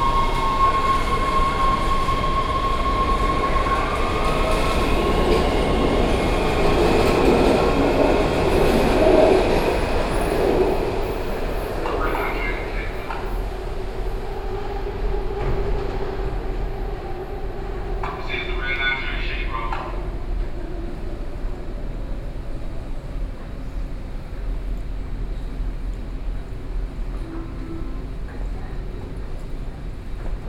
USA, Virginia, Washington DC, Metro, Train, Binaural